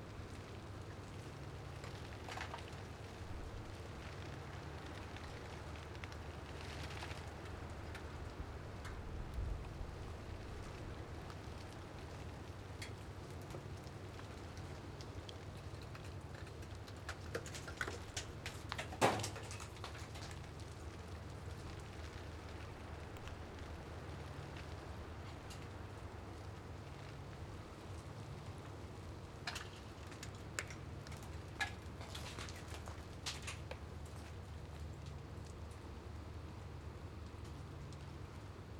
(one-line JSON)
{"title": "Lipa, Kostanjevica na Krasu, Slovenia - Ice falling from tower", "date": "2020-12-03 10:17:00", "description": "Ice falling down from tv, radio antena tower on mount Trstelj, Slovenia 3.12.2020. In the background you can hear cracking sleet on a bush.\nRecorded with Sounddevices MixPre3 II and Sennheiser ME66, HPF60hz.", "latitude": "45.86", "longitude": "13.70", "altitude": "629", "timezone": "Europe/Ljubljana"}